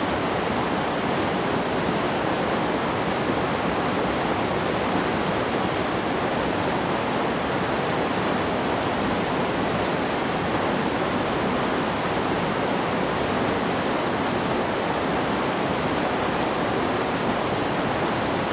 玉門關, 種瓜坑, Puli Township - Stream sound
Stream sound
Zoom H2n MS+XY
Nantou County, Taiwan, 26 March 2016